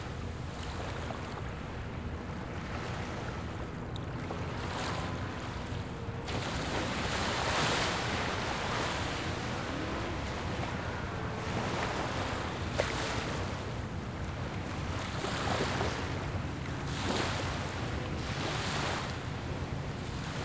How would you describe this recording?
Coney Island Creek Park. Zoom H4n